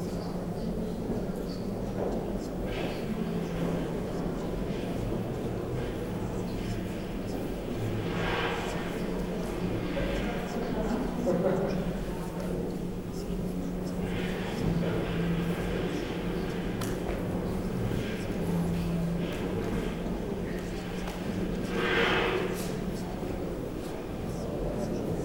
inside the museum ground floor area.
vistors passing by speaking, steps, sounds of video documentations nearby.
soundmap d - social ambiences, art spaces and topographic field recordings